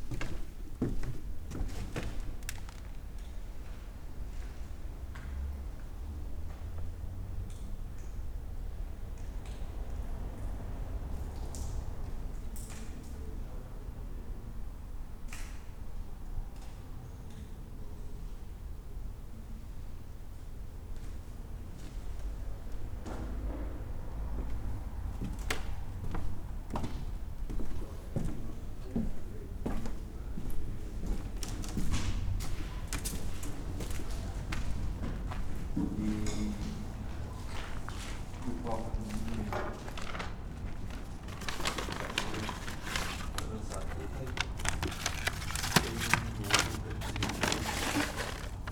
Avenida da Liberdade Fundão, Portugal - Cinema Gardunha
Sounds from an abandoned movie theater
30 July 2014, 12:00